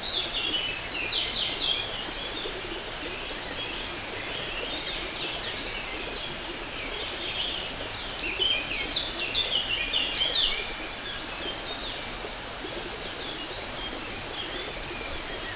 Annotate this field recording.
Stream and birds in the forest. Recorded with Sounddevices MixPre3 II and LOM Uši Pro